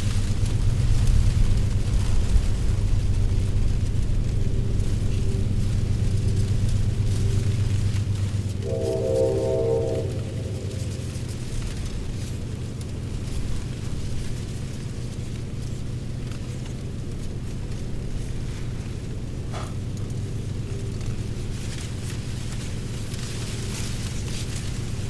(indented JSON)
{
  "title": "Warren Landing Rd, Garrison, NY, USA - Wind over the Tidal Marsh",
  "date": "2020-02-29 15:00:00",
  "description": "Recording made overlooking the Hudson River tidal marsh part of the Constitution Marsh Audubon Center and Sanctuary.\nSounds of dry leaves rustling in the wind, and the sound of a distant the Amtrak train horn.\nThis tidal marsh is a vital natural habitat for many species of wildlife and is a significant coastal fish habitat and a New York State bird conservation area.",
  "latitude": "41.40",
  "longitude": "-73.94",
  "altitude": "5",
  "timezone": "America/New_York"
}